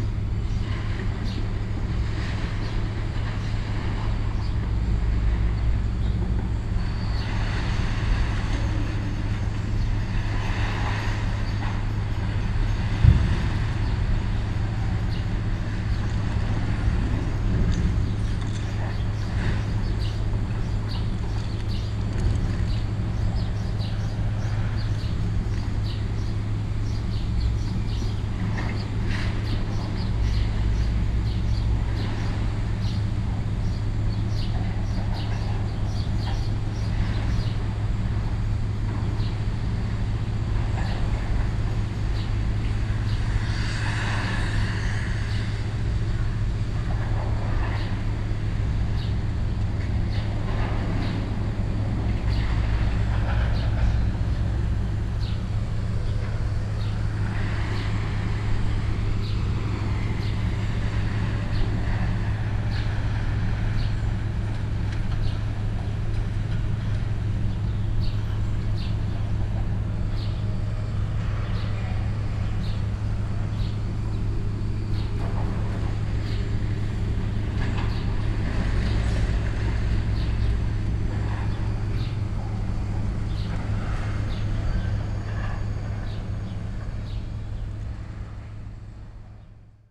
Grenzallee, Neukölln, Berlin - under bridge
under the bridge at Grenzallee, Neukölln. sounds from the nearby scrapyard, a ship is loaded with scrap metal.
(Sony PCM D50, DPA4060)
Deutschland, European Union, 30 May 2013, 15:10